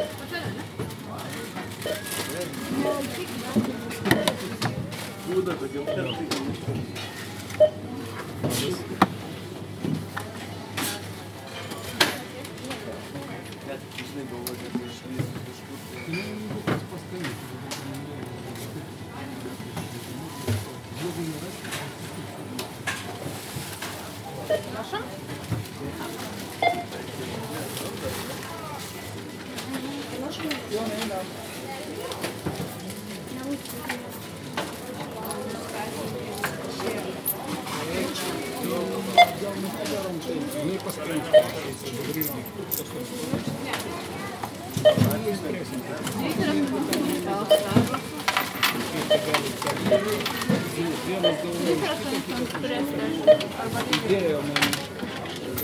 Recordist: Saso Puckovski
Description: Close to the supermarket entrance. People in line talking, scanning sounds and groceries being bagged. Recorded with ZOOM H2N Handy Recorder.